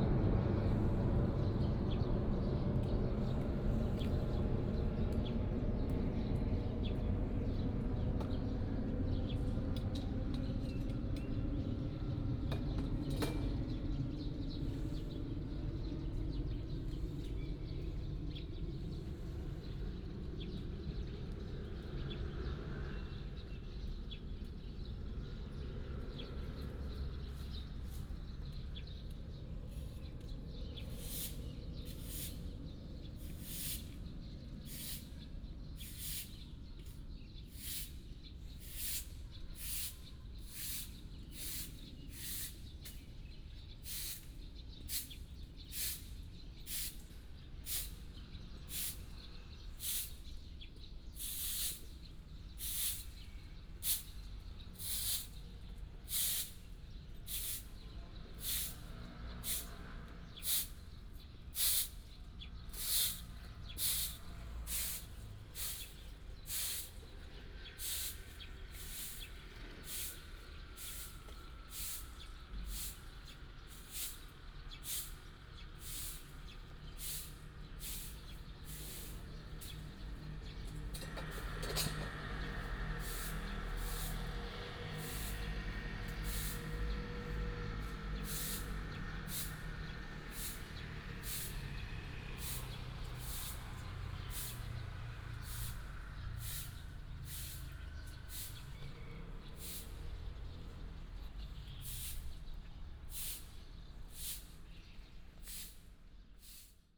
中崙溪南三元宮, Xinfeng Township - Sweeping voice
In the square of the temple, Birds sound, The motorcycle starts, Sweeping voice, The plane flew through
Hsinchu County, Xinfeng Township, 竹6鄉道43號